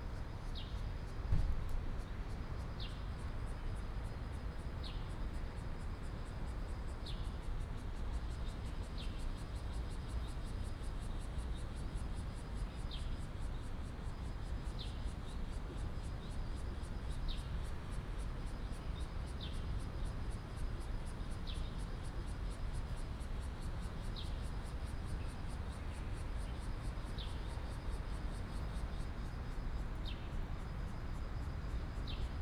龍門公園, Da’an Dist., Taipei City - Surrounded by high-rise park
Surrounded by high-rise park, Bird calls